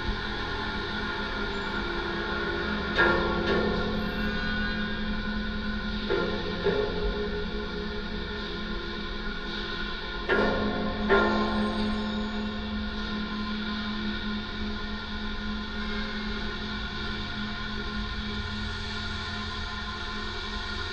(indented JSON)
{
  "title": "Akropolis, Karaliaus Mindaugo pr., Kaunas, Lithuania - AKROPOLIS parking lot drone",
  "date": "2019-12-19 19:00:00",
  "description": "Dual contact microphone recording of a metal railing inside a large multi-storey parking lot of AKROPOLIS supermarket. Persistent traffic hum resonates through the railing, cars are going over bumps, and other sounds.",
  "latitude": "54.89",
  "longitude": "23.92",
  "altitude": "28",
  "timezone": "Europe/Vilnius"
}